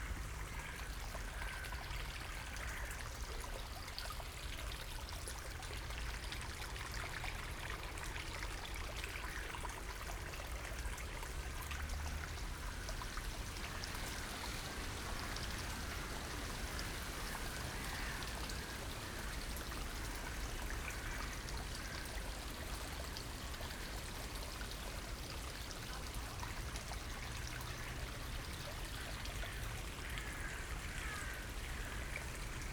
Rohrbruchpark, Marzahn, Berlin - river Wuhle water flow
water flow, finally audible at this point. the river has collected quite some water during its first kilometers, but also some dirt, since it is used as wastewater disposal for the surrounding areas.
/SD702, DPA4060)
Berlin, Germany, 23 May